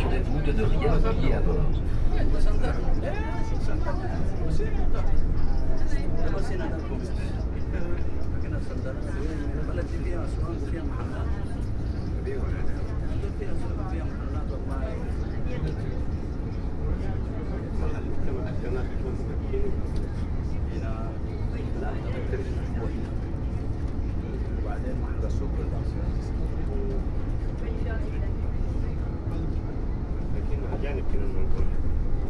Binaural recording of a tram ride from Bouffay to Chantiers Navals station.
recorded with Soundman OKM + Sony D100
sound posted by Katarzyna Trzeciak
22 August 2019, 13:41